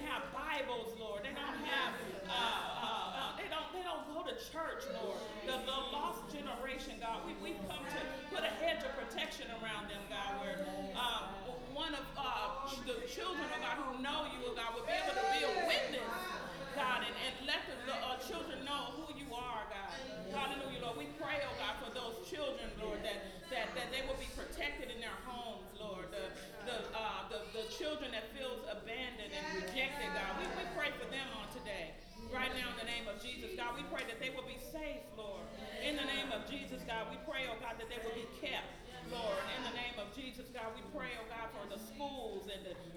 Covenant Worship Center 2622 San Pablo Ave, Berkeley, CA, USA - Prayer
This was recorded during the New Year's service for 2017~! Before the service began there was a lengthy prayer session. This was so long before the actual beginning of the event that not many people were there. I was seated in the front of the Church recording with binaural microphones.